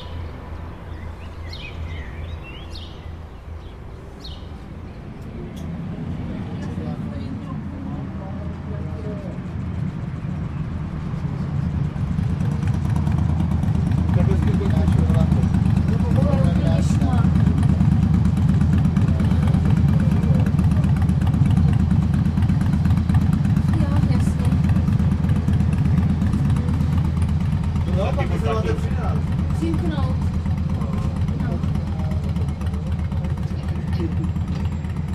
zelena brana, pardubice
climbing up the tower, sound workshop